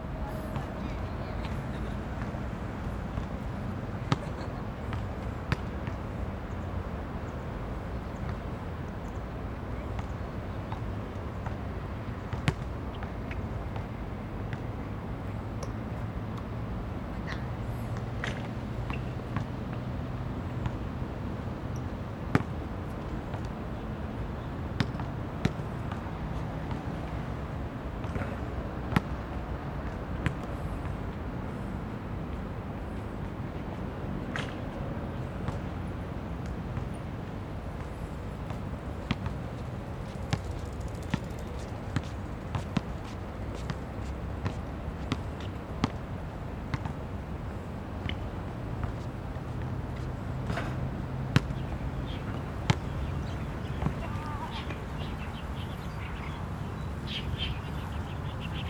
Sec., Huanhe W. Rd., Zhonghe Dist. - In Riverside Park
In Riverside Park, Bird calls, Play basketball, Traffic Sound
Rode NT4+Zoom H4n